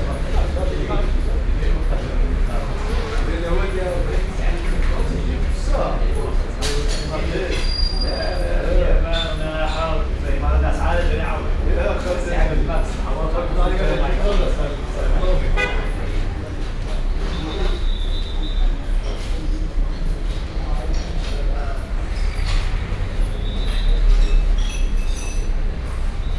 Tanger, Cafe Paris
inside the cafe Paris